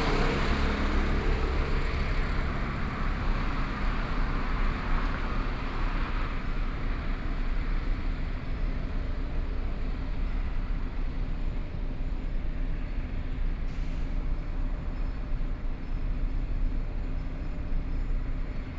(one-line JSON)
{"title": "Sec., Zhongshan Rd., 蘇澳鎮聖湖里 - Traffic Sound", "date": "2014-07-28 13:19:00", "description": "At the roadside, Traffic Sound, Trains traveling through, Hot weather", "latitude": "24.61", "longitude": "121.83", "altitude": "25", "timezone": "Asia/Taipei"}